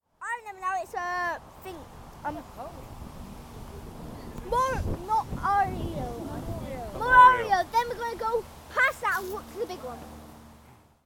Efford Walk Two: Walking towards memorial - Walking towards memorial